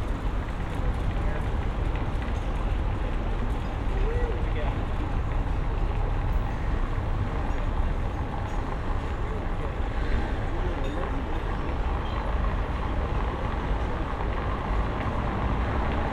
Berlin: Vermessungspunkt Maybachufer / Bürknerstraße - Klangvermessung Kreuzkölln ::: 18.07.2012 ::: 22:57